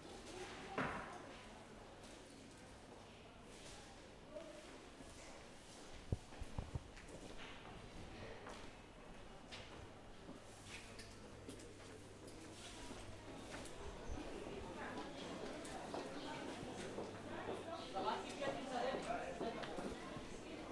Sofia Market Walk
Walk over the Sofia Market, starting in a hall with playing children, stopping once and again for listening to chats by people meeting each other.
Sofia, Bulgaria, 5 April 2011